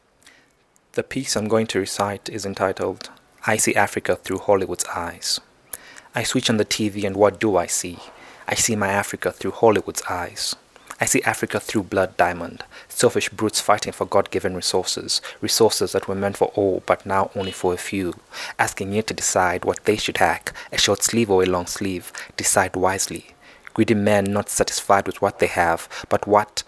{"title": "Maluba, Lusaka, Zambia - Peter Nawa sees Africa through Hollywood’s eyes…", "date": "2012-12-06 16:02:00", "description": "We made these recordings standing outside the dorm of my backpackers lodge, only a day before my departure from Lusaka back to London. We had recorded some poems with Peter earlier at Mulungushi and I had asked him to also record this poem, the first one I heard him recite at one of Bittersweet Poetry’s Open Mic sessions: “I see Africa through Hollywood’s eyes”…", "latitude": "-15.41", "longitude": "28.29", "altitude": "1279", "timezone": "Africa/Lusaka"}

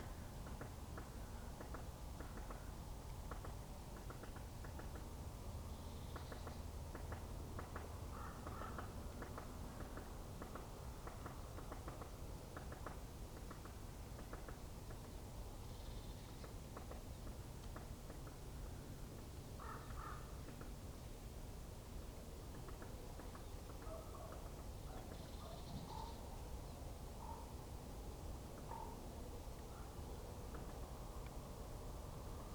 {"title": "bad freienwalde/oder: freienwalder landgraben - the city, the country & me: woodpecker", "date": "2015-12-31 15:26:00", "description": "a woodpecker, birds, barking dogs, bangers and a train in the distance\nthe city, the country & me: december 31, 2015", "latitude": "52.80", "longitude": "14.01", "altitude": "2", "timezone": "Europe/Berlin"}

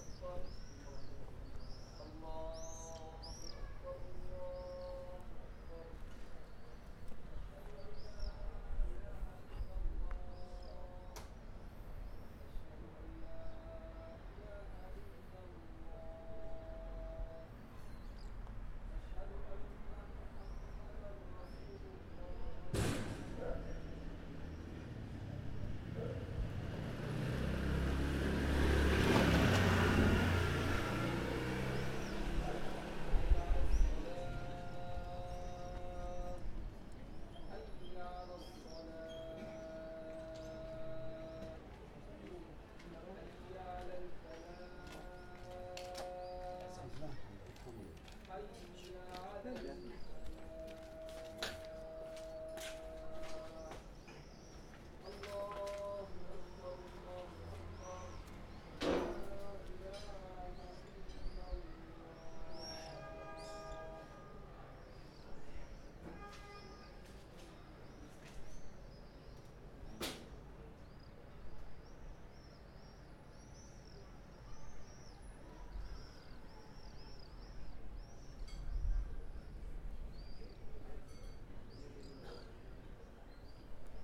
{"title": "Via Posidonio, Roma RM, Italia - acqua fontana", "date": "2015-09-25 15:08:00", "description": "acqua che sgorga dalla fontana", "latitude": "41.89", "longitude": "12.54", "altitude": "39", "timezone": "Europe/Rome"}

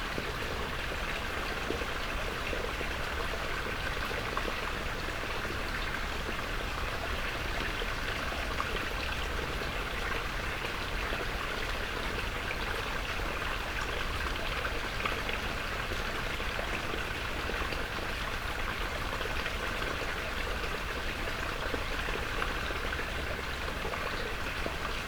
{"title": "Utena, Lithuania, valley of springs, binaural", "date": "2013-09-08 16:05:00", "latitude": "55.51", "longitude": "25.63", "altitude": "121", "timezone": "Europe/Vilnius"}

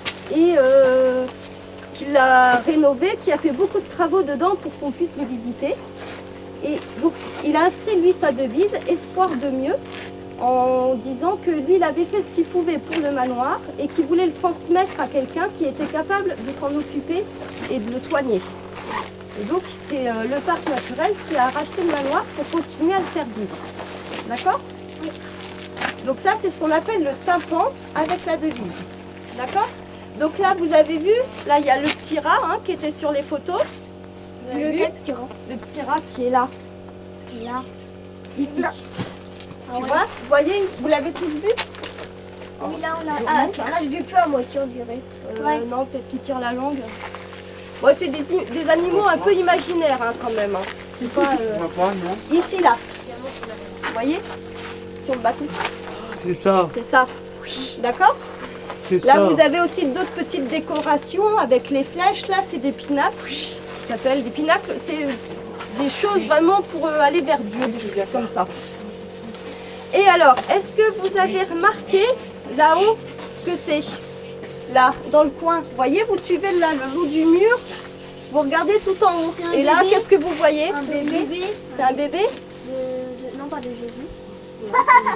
{"title": "Nocé, France - Visite du Manoir de Courboyer", "date": "2014-03-06 10:35:00", "description": "Enregistrement de la visite guidée du Manoir de Courboyer, Zoom H6, micros Neumann", "latitude": "48.40", "longitude": "0.67", "altitude": "192", "timezone": "Europe/Paris"}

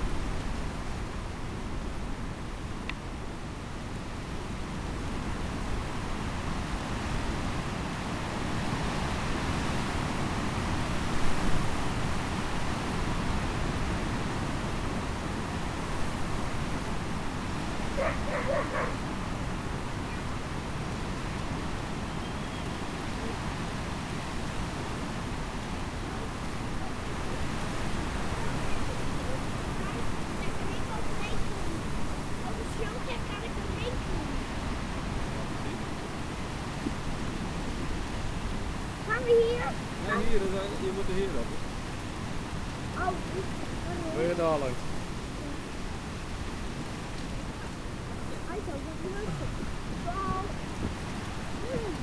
{"title": "laaksum: wäldchen - the city, the country & me: copse", "date": "2011-07-02 16:08:00", "description": "wind blowing through the trees, voices\nthe city, the country & me: july 2, 2011", "latitude": "52.85", "longitude": "5.41", "altitude": "1", "timezone": "Europe/Amsterdam"}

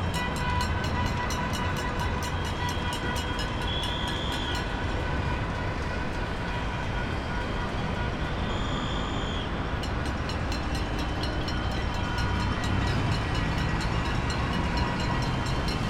{"title": "Edifício JK Bloco B - Panelaço | Banging pans protest against Bolsonaro during COVID-19 crisis", "date": "2020-03-18 20:00:00", "description": "Against Bolsonaro, people bang pans and scream at the windows of their apartments at night in downtown Belo Horizonte, in JK Building.\n2nd Day of protests in face of the crisis triggered by the Brazilian president after his actions when COVID-19 started to spread throughout the country.\nRecorded on a Zoom H5 Recorder", "latitude": "-19.92", "longitude": "-43.95", "altitude": "874", "timezone": "America/Sao_Paulo"}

